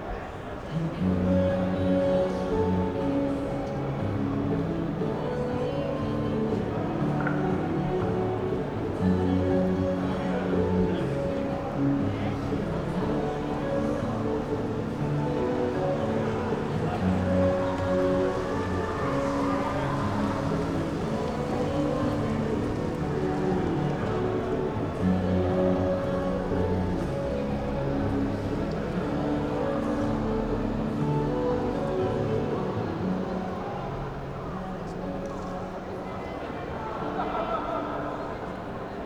Běhounská, Brno-střed, Česko - St. James Square (Jakubské náměstí)
Recorded on Zoom H4n + Rode NTG 1, 14.10. 2015 around midnight.